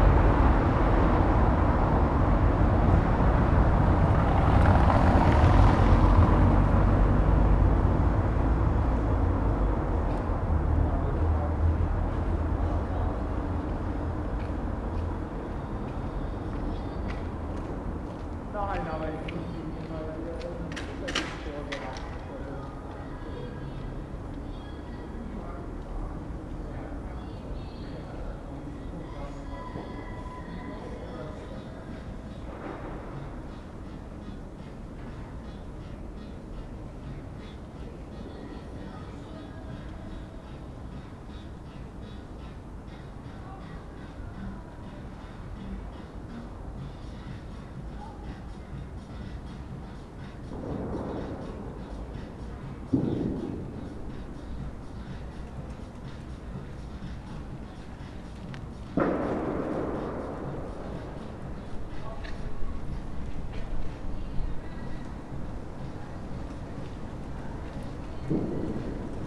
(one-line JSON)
{"title": "berlin: friedelstraße - the city, the country & me: night street ambience", "date": "2012-12-31 00:22:00", "description": "windy night, dry leaves and small flags in the wind, music of a nearby party, passers by, taxis, bangers in the distance (in anticipation of new years eve?)\nthe city, the country & me: december 31, 2012", "latitude": "52.49", "longitude": "13.43", "altitude": "46", "timezone": "Europe/Berlin"}